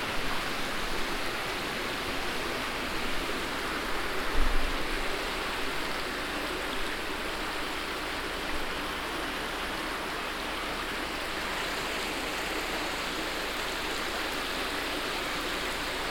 The border river Our here recorded under a bridge on a warm summer evening.
Untereisenbach, Our
Der Grenzfluss Our hier aufgenommen unter einer Brücke an einem warmen Sommerabend.
Untereisenbach, Our
La rivière frontalière Our enregistrée ici sous un pont, un chaud soir d’hiver.
Project - Klangraum Our - topographic field recordings, sound objects and social ambiences